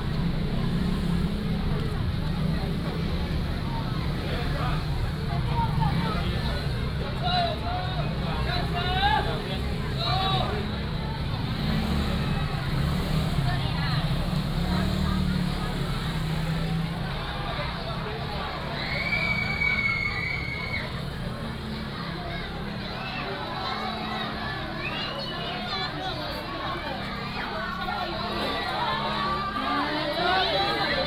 南寮村, Lüdao Township - walking in the Street
walking in the Street, Halloween festival parade